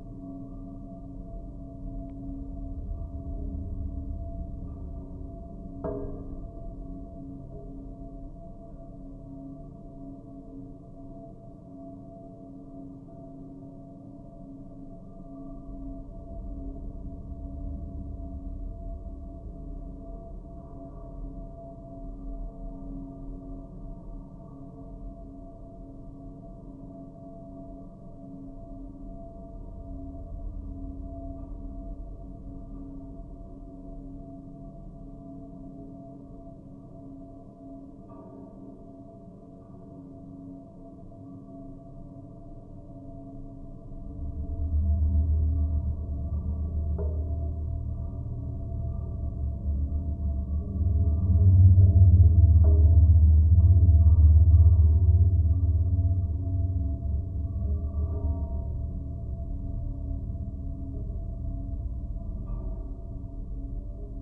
Jūrmala, Latvia, chimneys support wire
contact mics and geophone on chimney's support wire